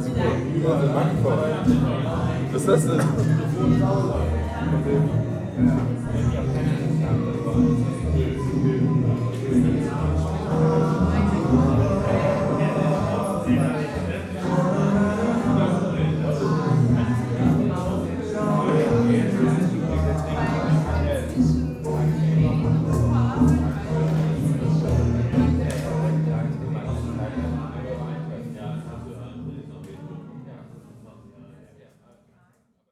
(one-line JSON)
{"title": "berlin, weydingerstraße: bar - the city, the country & me: bar people", "date": "2011-08-06 03:43:00", "description": "the city, the country & me: august 6, 2011", "latitude": "52.53", "longitude": "13.41", "altitude": "44", "timezone": "Europe/Berlin"}